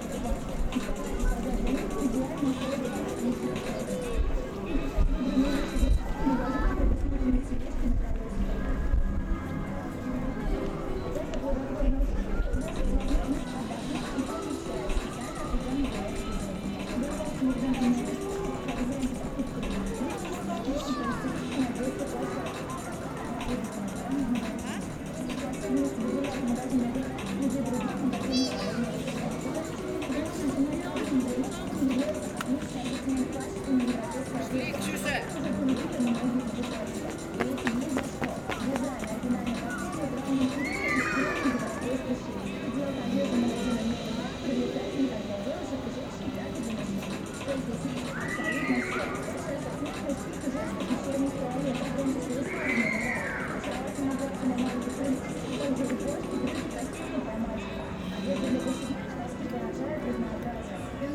One of the main walking roads of Chelyabinsk. People relax and go to the cafe. Lots of sculptures.